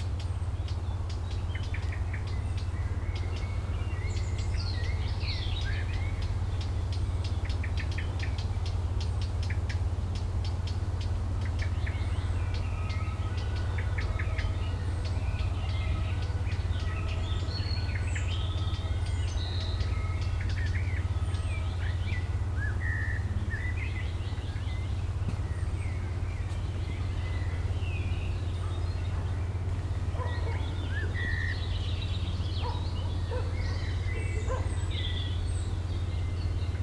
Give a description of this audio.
Unter Bäumen im Naturschutzgebiet Winkhauser Tal. Der Klang der Vögel an einem sonnigem, leicht windigem Fühlingsmorgen. In der Ferne ein Ambulanzwagen, Hunde und eine vorbeifahrende S- Bahn. Standing under trees at the nature protection zone winkhauser valley. The sounds of the birds at a mild windy, sunny spring morning. Projekt - Stadtklang//: Hörorte - topographic field recordings and social ambiences